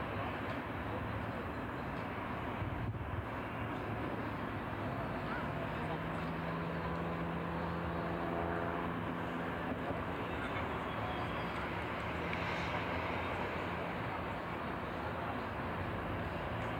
In this audio you will hear the Skate Park of 15th Avenue with 4th Street, Zipaquirá municipality. You will hear the nearby transit of this place, people taking their dogs, children out for a walk playing, birds singing, the siren of an ambulance and of course young people riding their skateboards on the track.